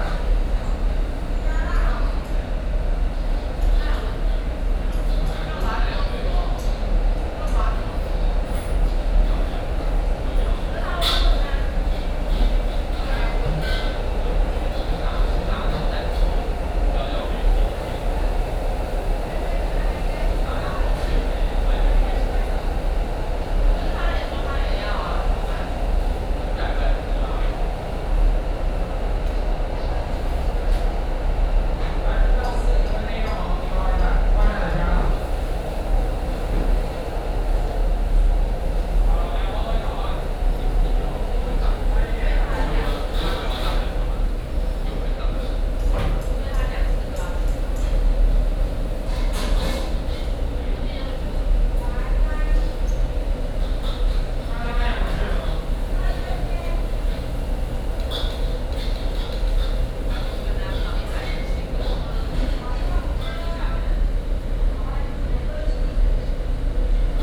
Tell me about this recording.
Inside the restaurant, The sound of cooking